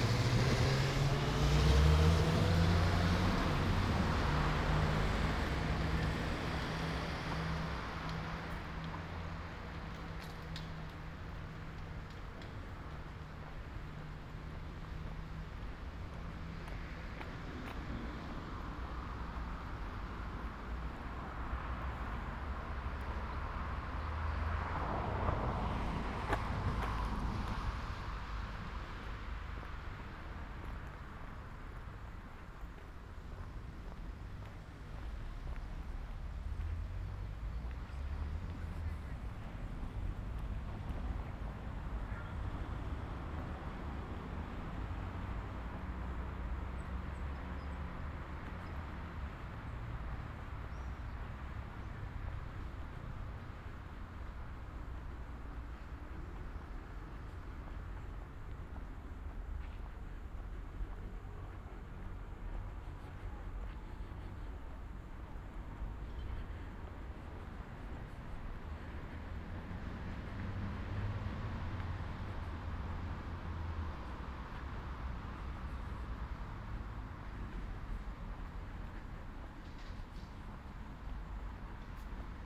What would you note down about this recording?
"Phase II Sunday Coffee at Valentino park in the time of COVID19" soundwalk, Chapter LXXII of Ascolto il tuo cuore, città. I listen to your heart, city, Sunday May 10th 2020. First Sunday of Phase II, coffee at the Valentino Park kiosk, sixty one (but seventh day of Phase II) of emergency disposition due to the epidemic of COVID19, Start at 2:05 p.m. end at 3:04 p.m. duration of recording 58’55”, The entire path is associated with a synchronized GPS track recorded in the file downloadable here: